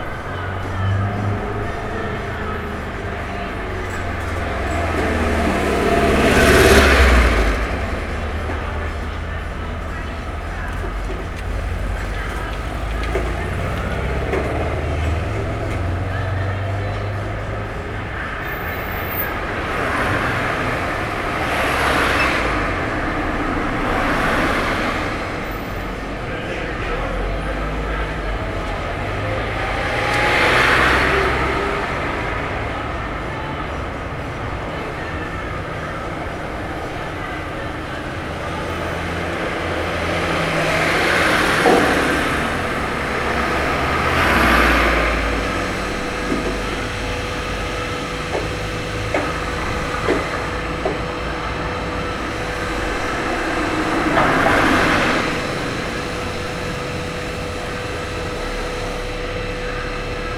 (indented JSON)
{
  "title": "Binckhorst Mapping Project: Komeetweg. 12-02-2011/16:15h - Binckhorst Mapping Project: Komeetweg",
  "date": "2011-12-02 16:15:00",
  "description": "Binckhorst Mapping Project: Komeetweg",
  "latitude": "52.07",
  "longitude": "4.34",
  "altitude": "2",
  "timezone": "Europe/Amsterdam"
}